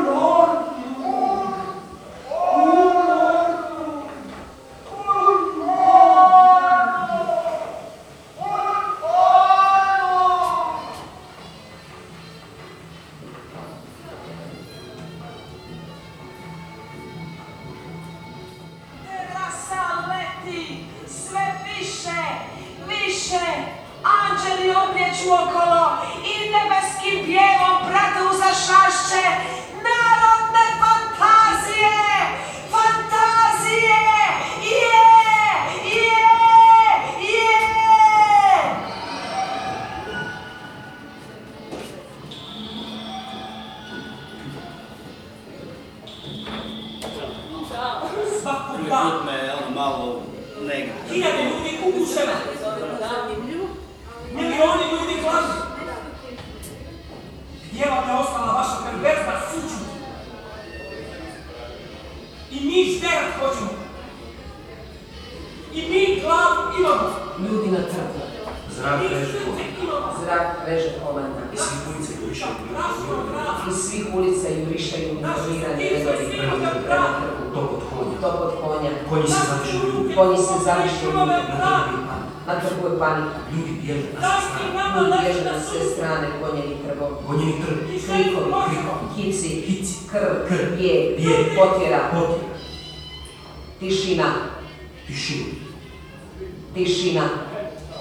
an insert from the acoustic playing (june 2011) with a croatian avangarde text from the early 30-ties( futurism, dada); context: an exibition of anti-regime artists on former tito's boat galeb; loudspeakers at the bottom of the engine room, listeners standing on (or crossing)a bridge high above
Rijeka, Croatia